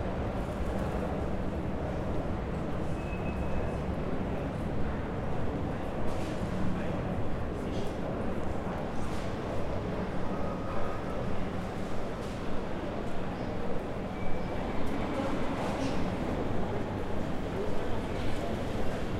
Frankfurt Hauptbahnhof 1 - 27. März 2020
Again friday, the week difference is nearly not audible. The hall is still emptier as usual, so some sounds are clearer as they would be, like a bottle on the floor.